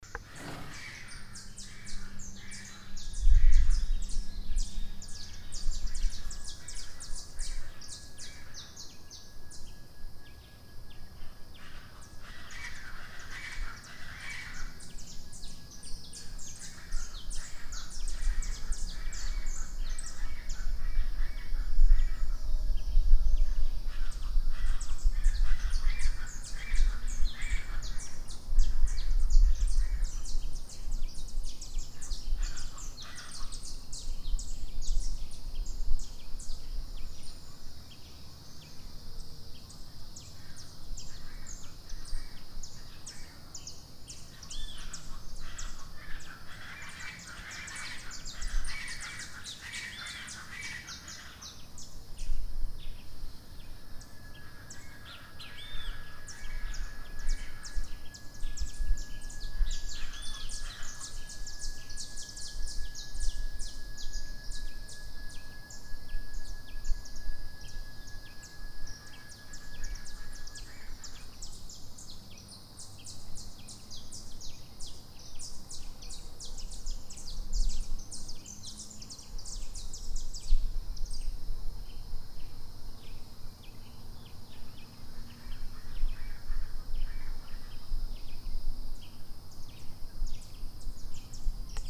Praia do João Paulo
Birds of Florianópolis at 6am. One can hear the backgroud sound of the highway.
Florianopolis, Brazil, Birds